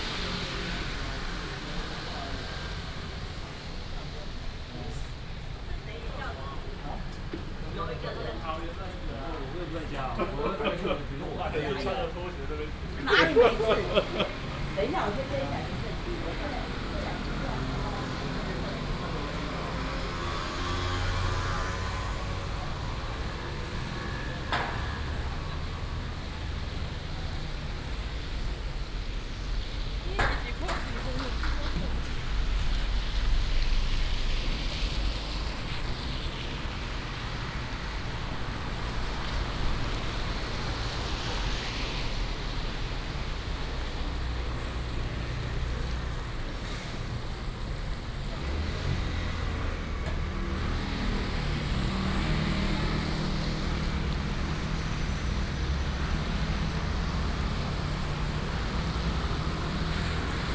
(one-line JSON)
{"title": "Zhonghua Rd., Taoyuan Dist., Taoyuan City - Walking in the rain on the road", "date": "2016-10-12 12:42:00", "description": "Walking in the rain on the road, Traffic sound", "latitude": "24.99", "longitude": "121.31", "altitude": "111", "timezone": "Asia/Taipei"}